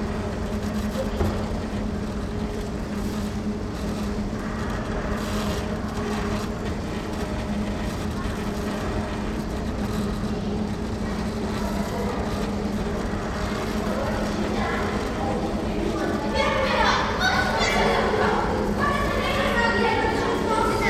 berlin, hermannplatz: warenhaus, defekte rolltreppe - the city, the country & me: grinding noise of moving staircase and children entering karstadt department store
the city, the country & me: june 3, 2008
5 June 2008, ~11:00